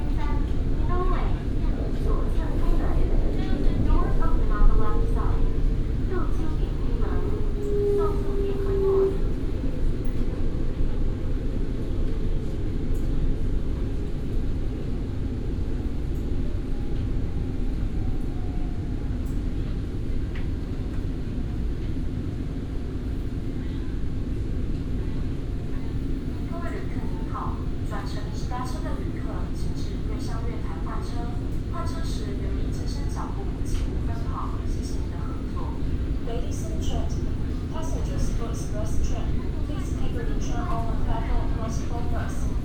Guishan Dist., Taoyuan City - In the car
In the car, Taoyuan International Airport MRT, from National Taiwan Sport University Station to Chang Gung Memorial Hospital Station